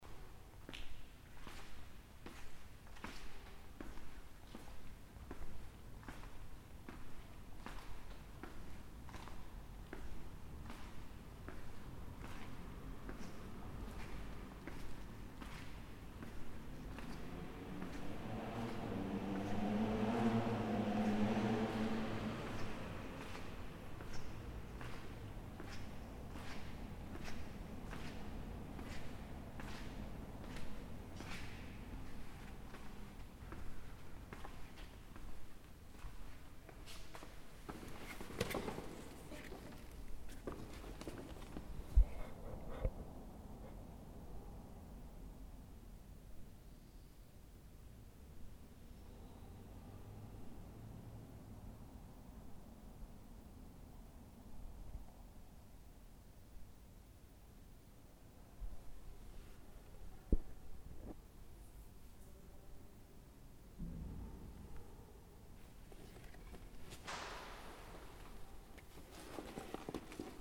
Kirch San Martino, Durchgang und Gehen
22 July 2011, 11:55